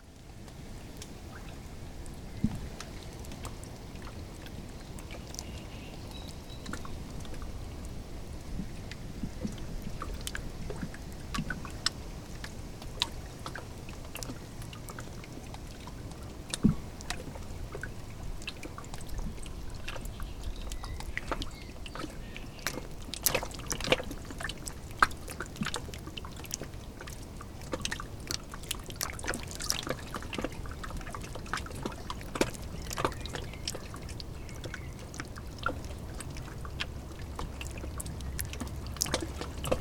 Šlavantai, Lithuania - Water under tree roots by the lakeside
Tiny waves crashing against tree roots on the shore of lake Šlavantas. Recorded with Olympus LS-10.
Lazdijų rajono savivaldybė, Alytaus apskritis, Lietuva